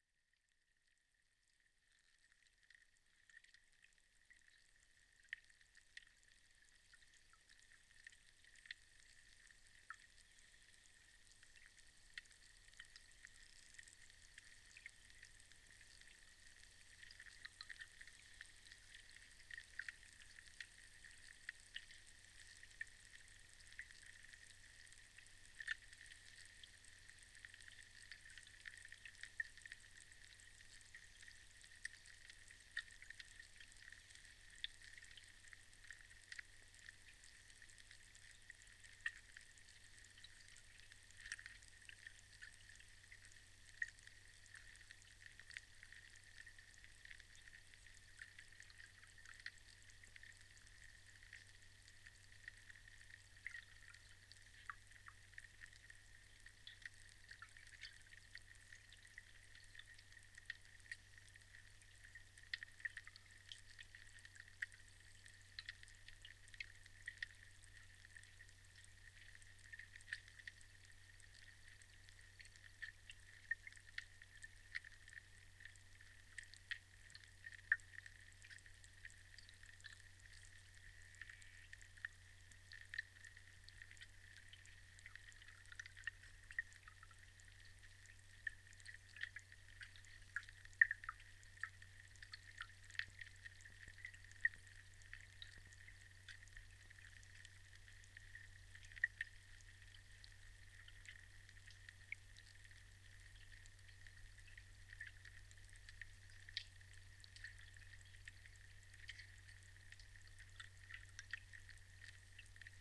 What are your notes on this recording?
hydrophones capture the low drone of tractor on the close road